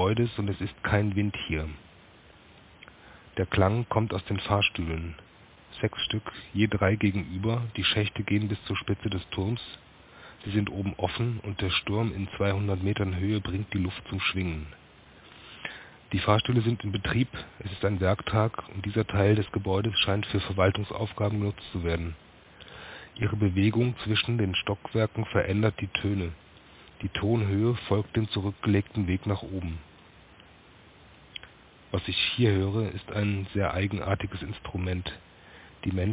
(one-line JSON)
{"title": "KulturpalastKlang / culture palace, Warschau / Warsaw", "latitude": "52.23", "longitude": "21.01", "altitude": "141", "timezone": "GMT+1"}